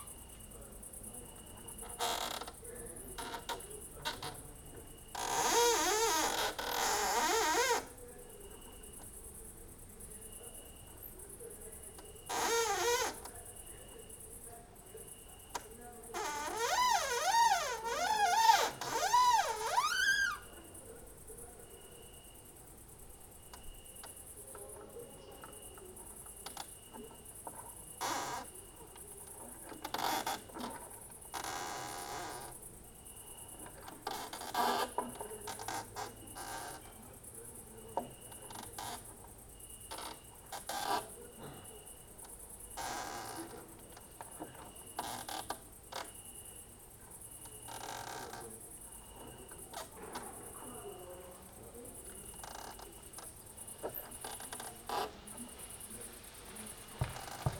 yard window - two crickets on a full moon night